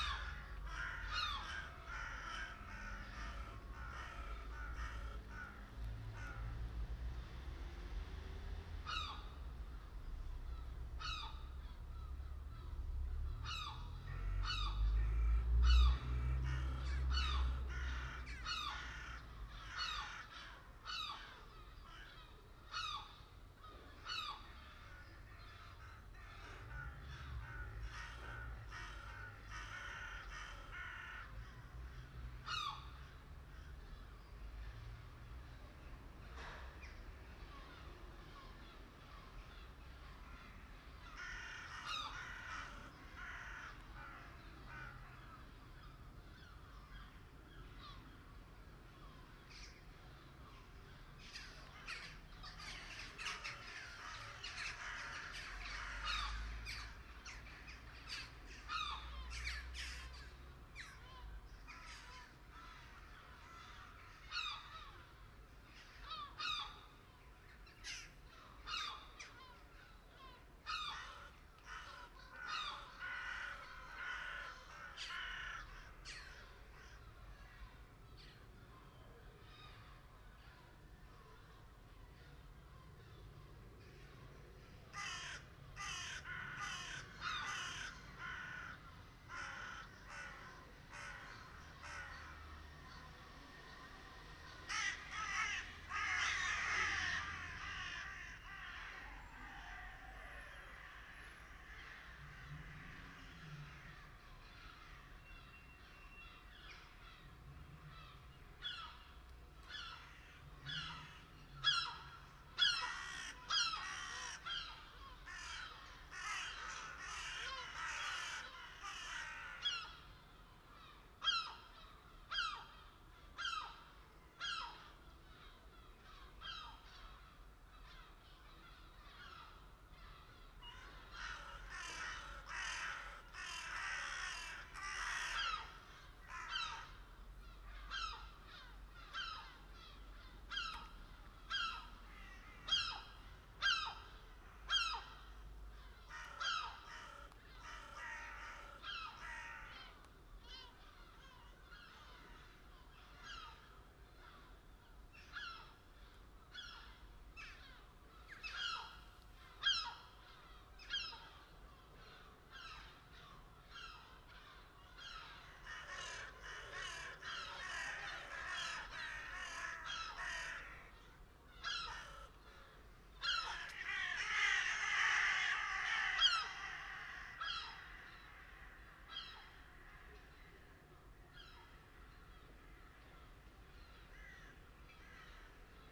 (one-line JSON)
{"title": "Birds in centre The Hague - Seagulls, Crows and Jackdaws", "date": "2016-06-19 11:30:00", "description": "An 'aerial battle' above my house between seagulls, crows and jackdaws.\nBinaural recording", "latitude": "52.08", "longitude": "4.31", "timezone": "Europe/Amsterdam"}